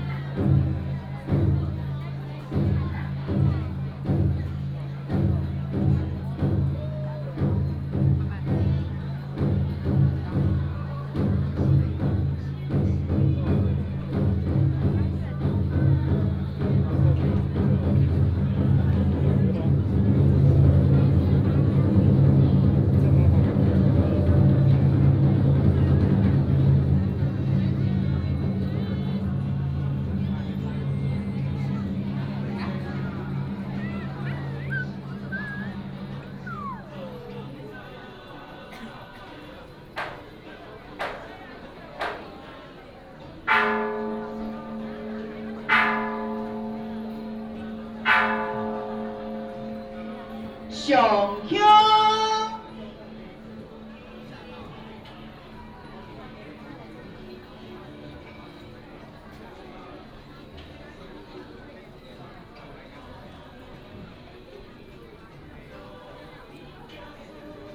Dajia Jenn Lann Temple, 台中市大甲區 - Temple ceremony
Temple ceremony, The president of Taiwan participated in the temple ceremony